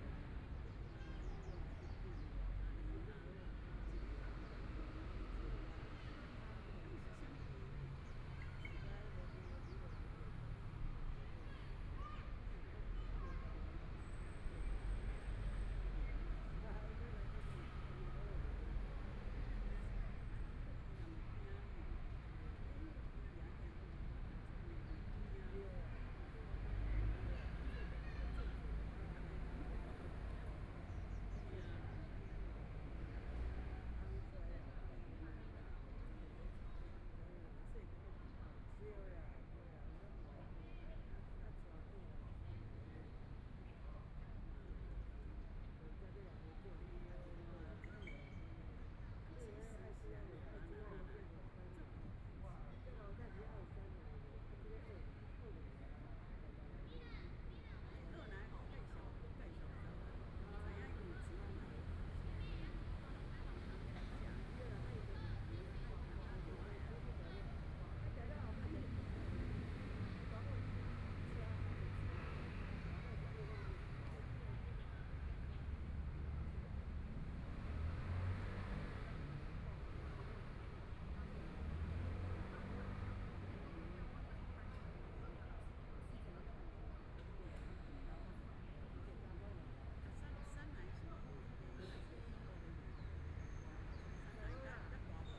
ZhongJi Park, Taipei City - Afternoon sitting in the park
Afternoon sitting in the park, Traffic Sound, Sunny weather
Binaural recordings, Please turn up the volume a little
Zoom H4n+ Soundman OKM II